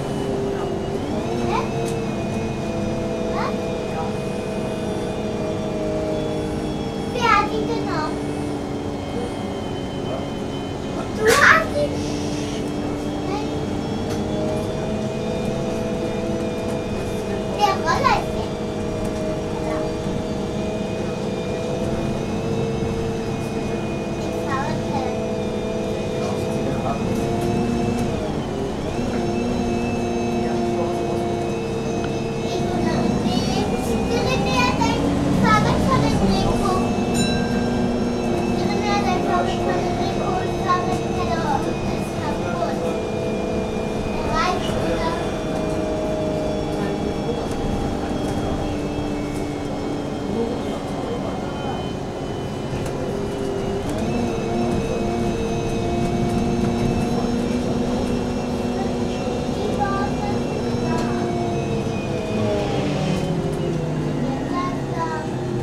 Erlangen, Deutschland, midtown bus - taking the midtown bus
taking the midtown bus from the station, two stops, a little child is talking